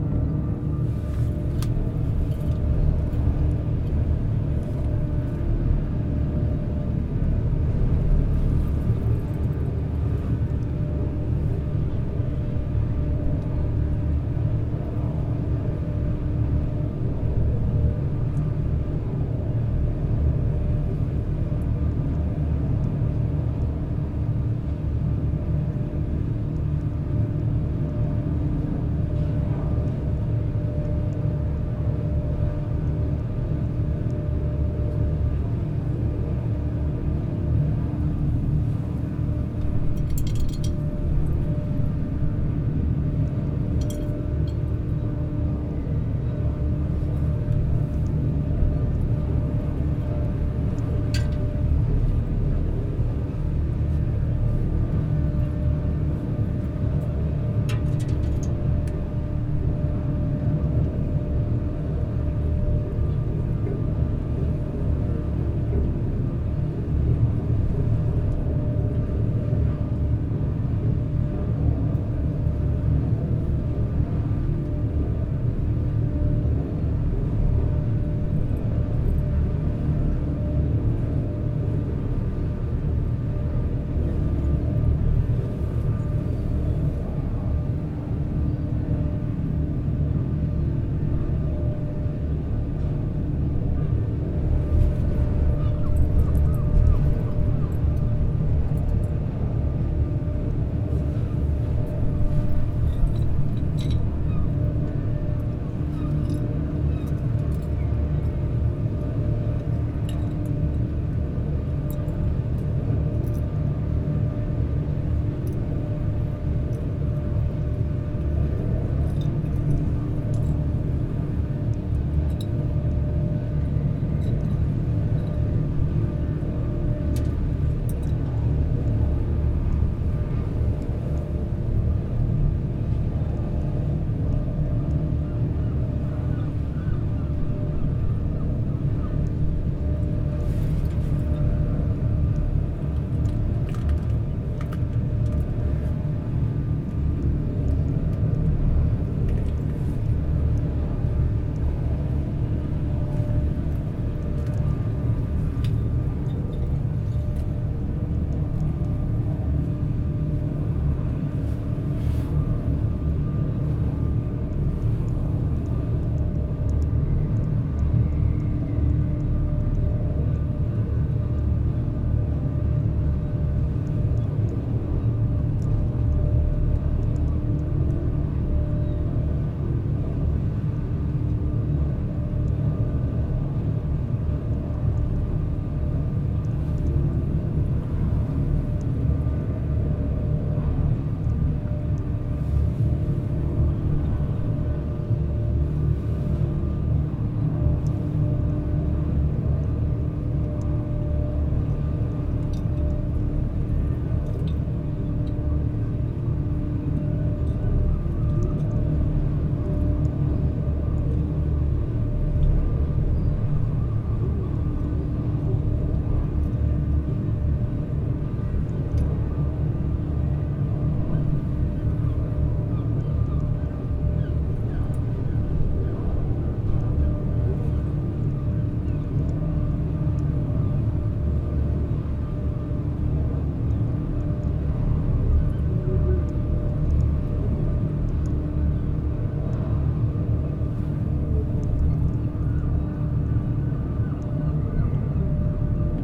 Quai des Frégates, Saint-Nazaire, France - Saint-Nazaire, buoy and beacon cemetery
Buoy and beacon cemetery and Cargill factory